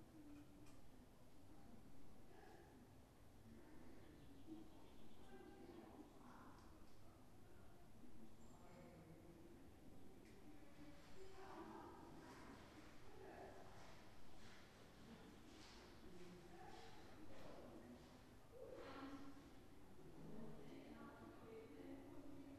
The ladies living at the Waldheim, Bad Salzuflen, having dinner. The staircase making the soundscape oddly shifting.